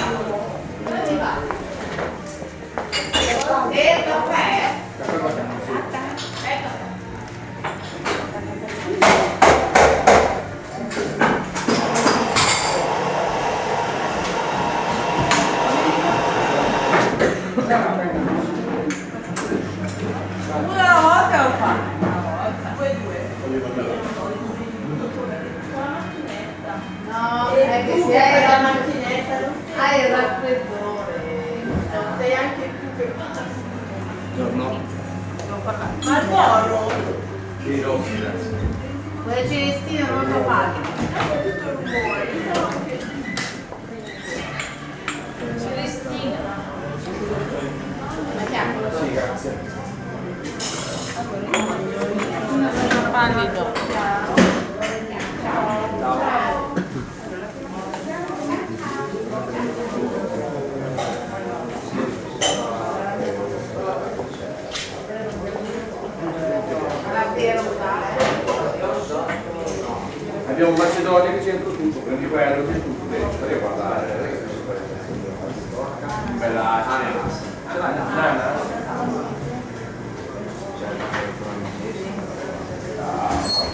Carpiano (MI), Italy - A coffee at the bar
Taking a coffee at the bar on a Saturday morning. Church bells, old people (i vècc) speaking dialects, guests at the desk.
Carpiano Province of Milan, Italy, 20 October